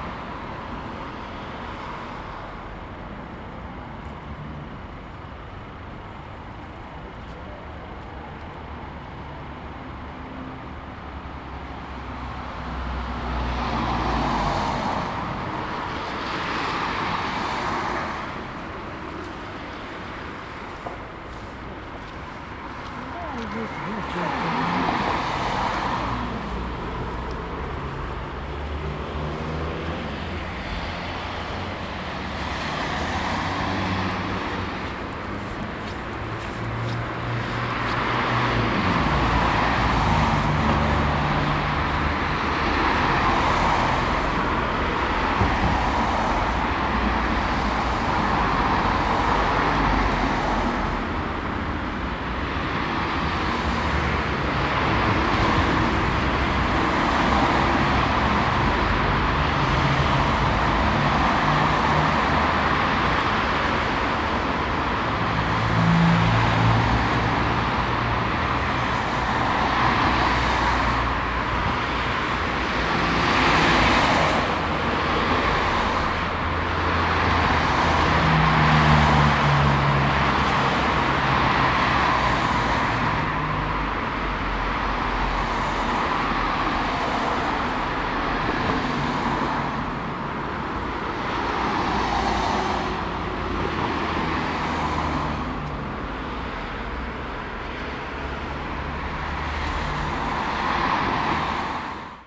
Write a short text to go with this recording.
The soundscape of today versus the soundscape of a memory…This is a recording I made today of the place where I used to go to elementary school. What you hear now is medium traffic, rhythmic, mostly made out of small cars and vans. Twenty years ago it was very different, there was no diverting route in my town for heavy traffic so lorries used to drive through this very street. There used to be a tram line as well carrying people from one end of the city to the other (later it was dismantled). The rhythm was much more syncopated as heavy traffic was not all throughout the day, but was noisy, loud and low-frequency-based. The tram was the constant, with its metallic overtones. Now all of these are just sonic memories, sonic flashbacks that the mind brings when all it can hear is traffic, traffic, traffic. Recorded with Zoom H2n in surround mode